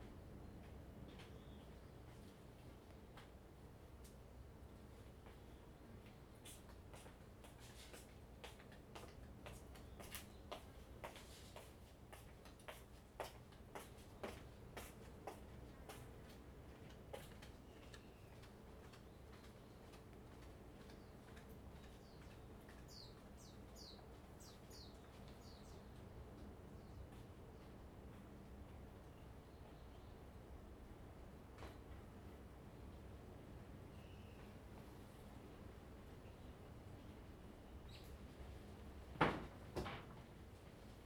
Footsteps, Outside the temple, Birds singing
Zoom H2n MS +XY
31 October, ~12:00